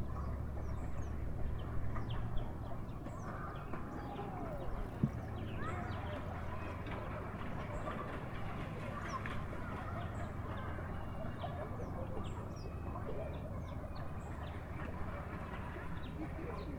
Sounds from the beach, a paddleboat, and a cicada at Ouabache State Park. Recorded at an Arts in the Parks Soundscape workshop at Ouabache State Park, Bluffton, IN. Sponsored by the Indiana Arts Commission and the Indiana Department of Natural Resources.
Indiana, USA, 21 July 2019